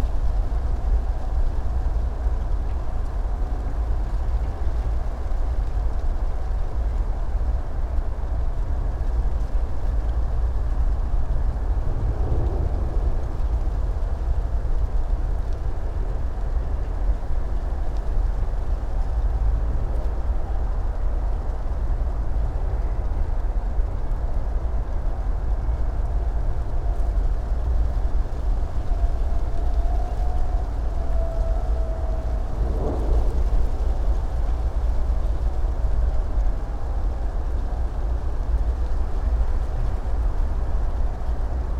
Tempelhofer Feld, Berlin - oak tree, leaves, wind and drone
leaves of an oak tree in the wind, intense drone from the autobahn / motorway because of wind from south west.
(PCM D50, Primo EM172)
Berlin, Germany, 17 December 2013